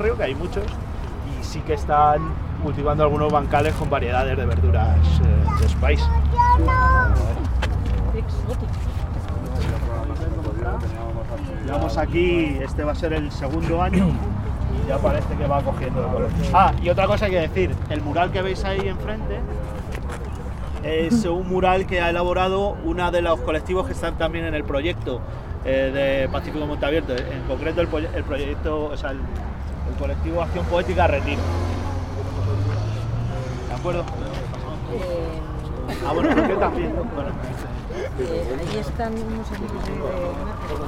Adelfas, Madrid, Madrid, Spain - Pacífico Puente Abierto - Transecto 01 Huerto Adelfas
Pacífico Puente Abierto - Transecto - Huerto Adelfas